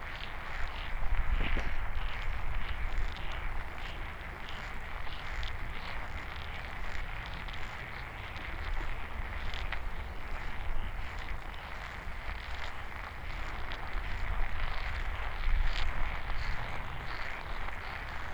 Valparaíso, Chile - Pond with clams
Valparaíso, Valparaíso Region, Chile, 29 November 2013, 11:00